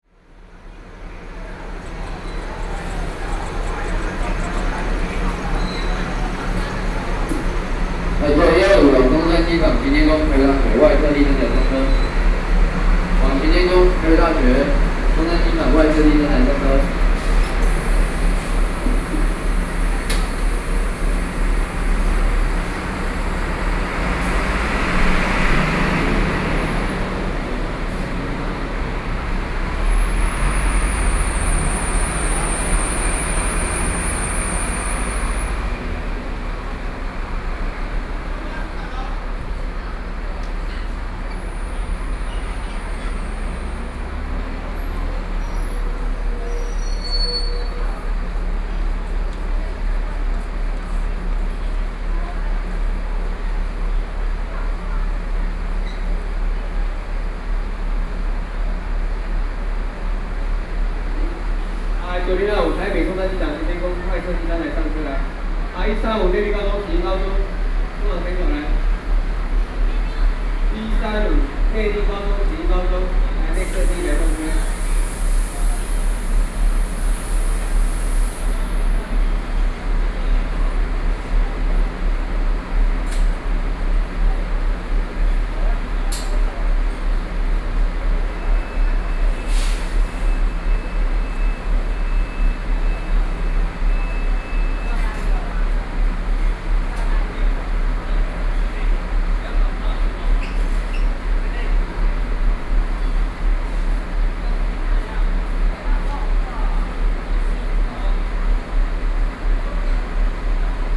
Zhongli City - Bus Terminus
in the Bus station hall, Sony PCM D50 + Soundman OKM II